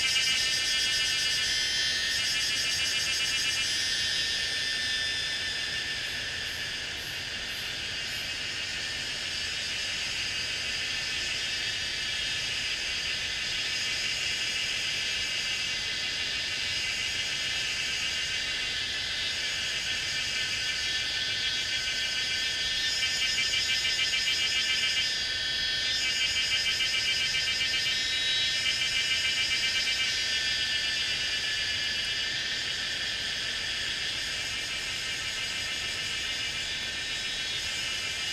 in the woods, Cicada sounds, Far from the river sound
Zoom H2n MS+XY